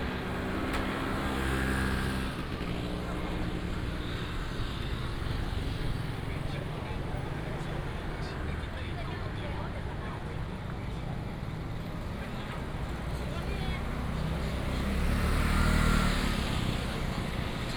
Walking in the traditional market, Traffic sound